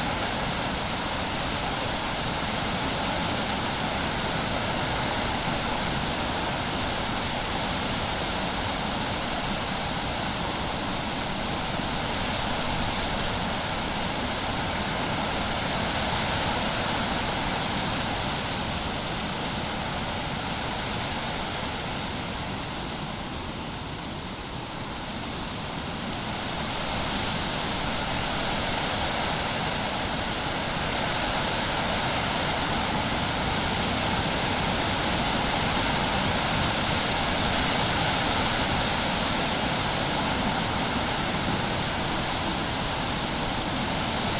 Mons, Belgium - Wind in the trees
A strong wind is blowing in the poplar trees. Weather is not very good, would it be a good time to hear a simple wind in the trees ?
21 October, 16:25